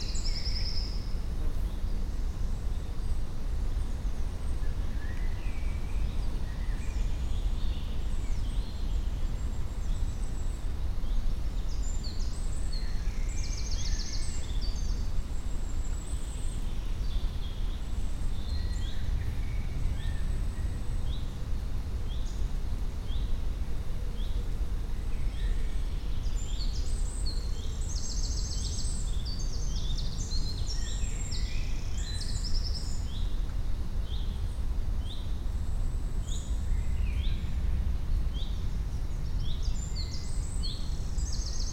Court-St.-Étienne, Belgique - The forest
Very quiet ambience in the forest. Wind in the trees, birds, silence.